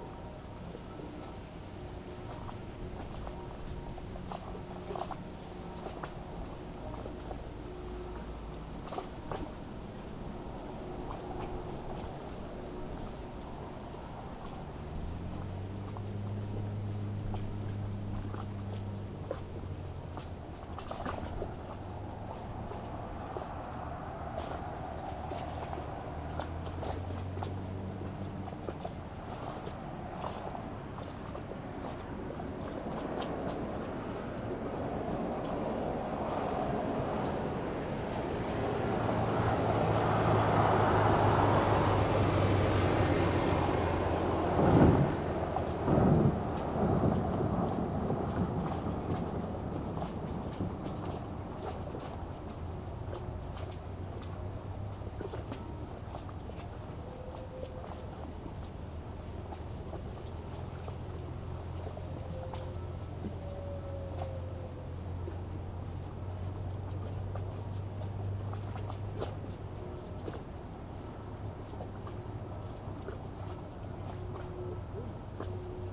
Berlin, Stralau - waterplane returns
waterplane returns from a round trip, surprisingly silent... river bank ambience, wind, distant sunday churchbells
Berlin, Deutschland, 25 July 2010, 10:50